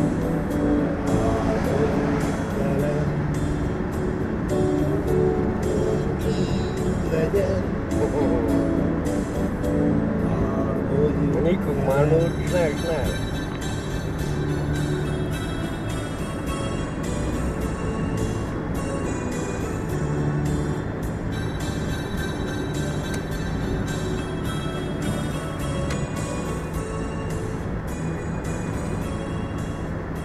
On the road with Gyuri, a Hungarian migrant worker on his way from Linz to Szederkény, accompanied by a homey version of Abba's 'I have a dream' sounding from his car stereo - four minutes of illusions about Central Europe.
Schwechat, Austria, 28 May, ~14:00